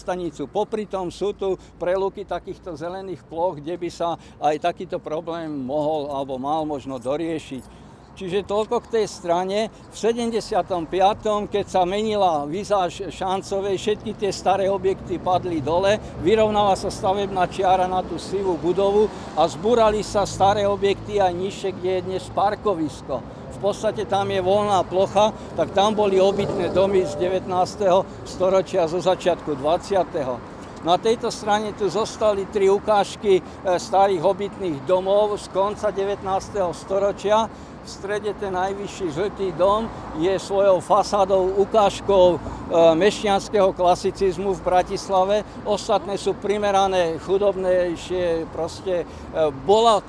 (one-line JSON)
{"title": "Pri Lacinke", "date": "2014-06-13 19:58:00", "description": "Unedited recording of a talk about local neighbourhood.", "latitude": "48.16", "longitude": "17.11", "altitude": "161", "timezone": "Europe/Bratislava"}